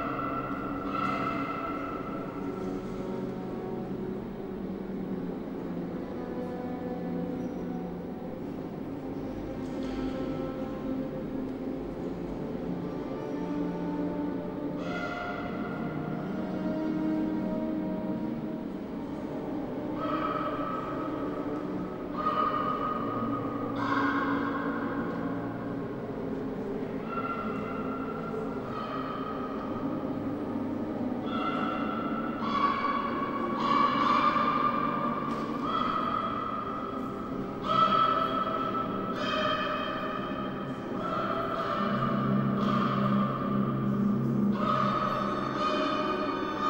Dox, inside the installation
Recording from the exhibition Blood, Sweet, Tears by Douglas Gordon in DOX Center. Soundtrack of Bernard Hermann for the Hitchcock cult film Vertigo, mixed with regular call of crows in the TV monitors.
26 July 2009